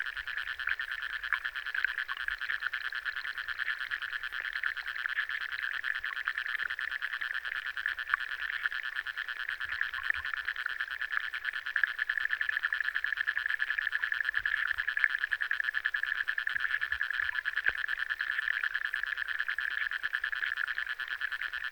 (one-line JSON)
{"title": "Lake Luknas, Lithuania, underwater activity", "date": "2018-07-25 16:10:00", "description": "there is no footbridge from the last year visit, but there are a lot of underwater activities", "latitude": "55.57", "longitude": "25.53", "altitude": "94", "timezone": "Europe/Vilnius"}